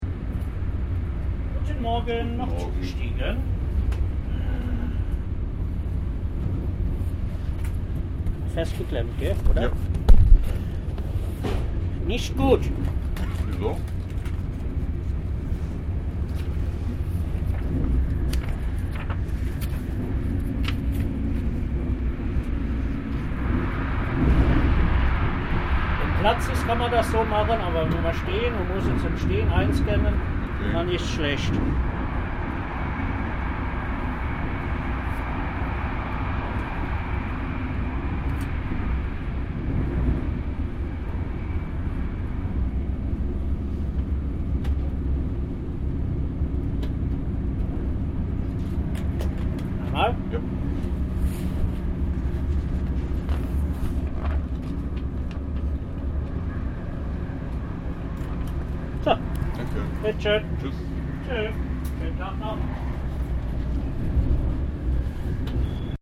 Fahrkartenkontrolle / Ticket inspection
Fahrkartenkontrolle, Ticket inspection, DB, Köln-Hamburg